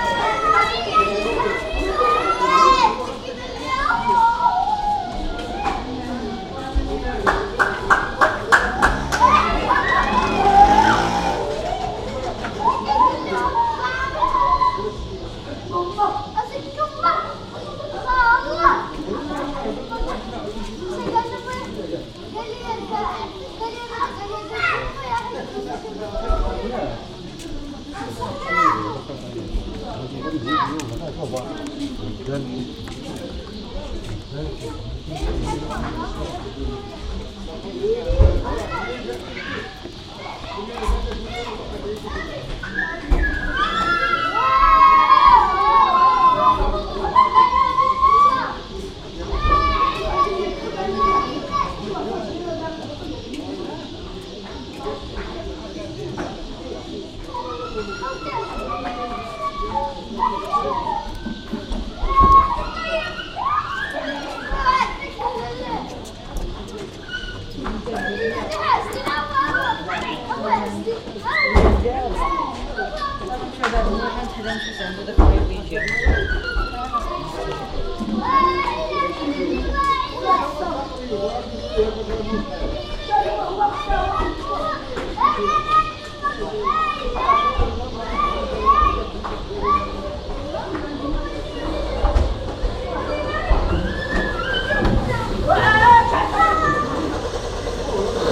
Essaouira, Baouakhir Mosquee, street life
Africa, Marocco, Essaouira, street